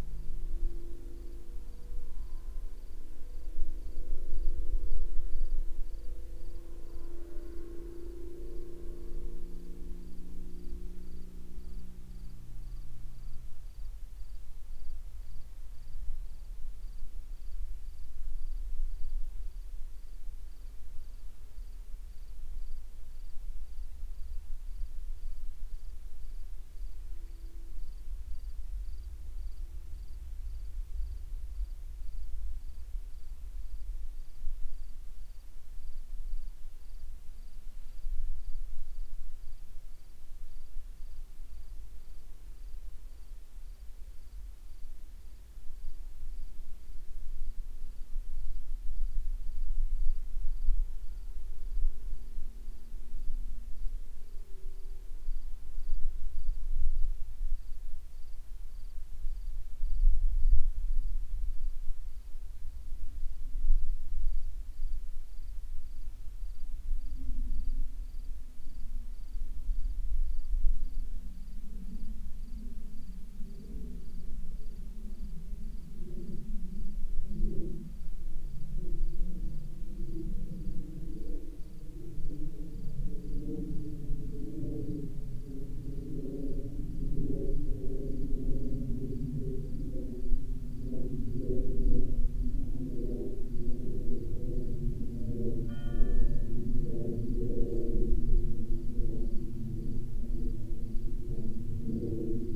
wengen, silence and bell at night
in the night, a little wind, a distant cricket, a church bell, silence
soundmap d - social ambiences and topographic field recordings